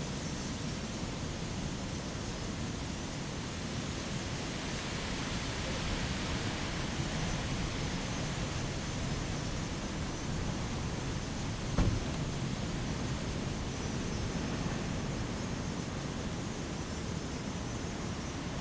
港口村, Fengbin Township - Cicadas and the waves
Cicadas sound, sound of the waves, In the parking lot
Zoom H2n MS+XY